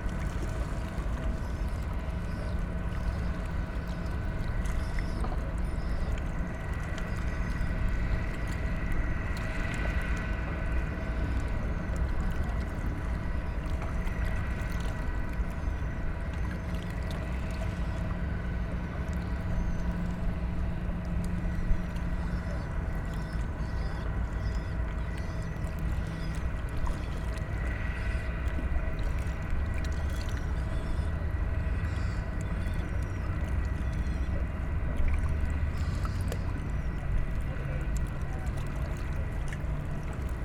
Köln, river Rhein, ambience at the river bank, freighter departs from the opposite landing stage
(Sony PCM D50, DPA4060)